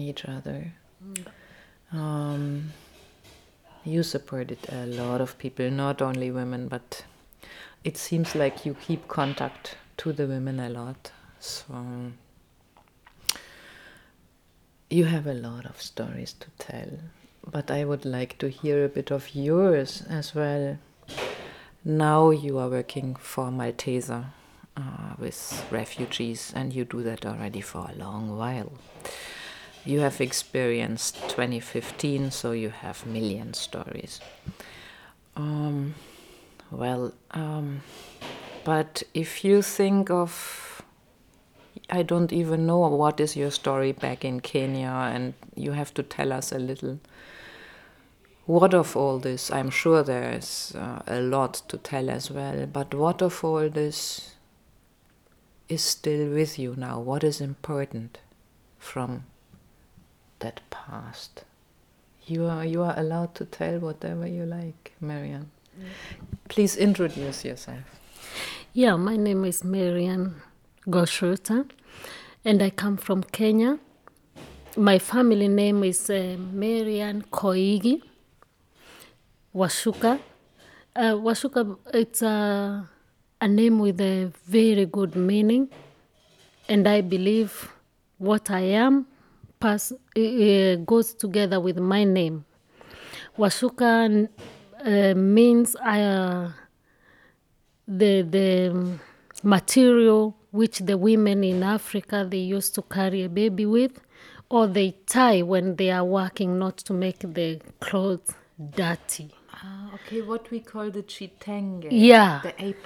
It's only quite recently that Maryann relocated from town to the Germany countryside... a good moment to reflect together with her on her journey from her native Kenya to Germany ... and to her present life and work...
"who i am goes well with my name..." Maryann explains as if in summarizing her life. "Wacuka" in kikuyu, means the one who is well taken care of and, the one who is taking care, the carer...